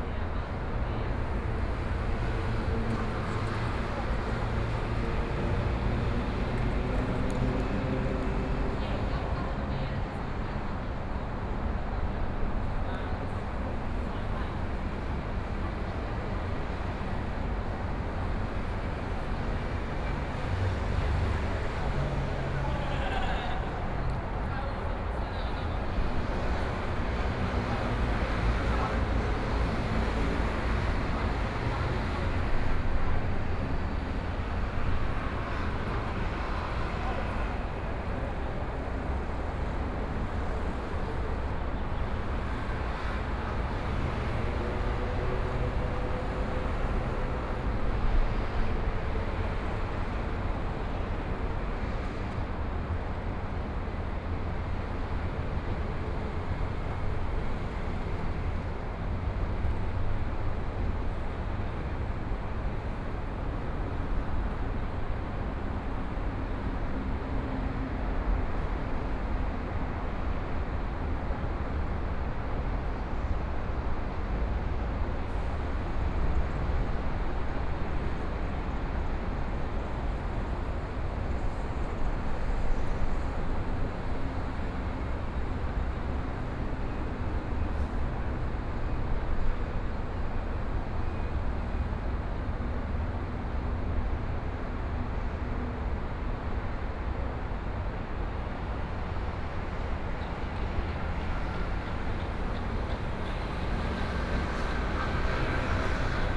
水門美堤河濱公園, Taipei city - Sound from highway
Sound from highway, Environmental sounds, Traffic Sound
Please turn up the volume a little
Binaural recordings, Sony PCM D100 + Soundman OKM II
Taipei City, 中山高速公路